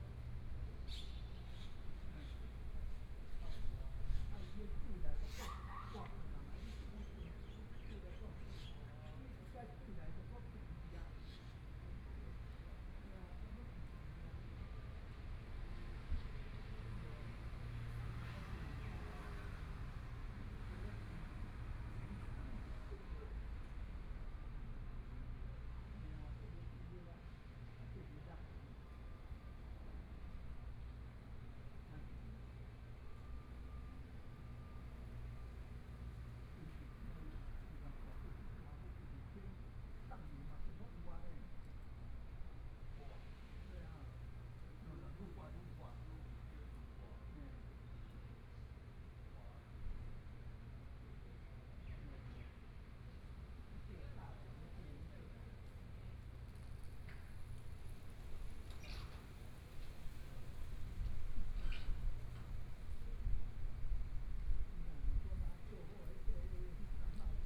Traffic Sound, Dialogue among the elderly, Binaural recordings, Zoom H4n+ Soundman OKM II ( SoundMap2014016 -11)
Taitung County, Taiwan, 16 January, ~15:00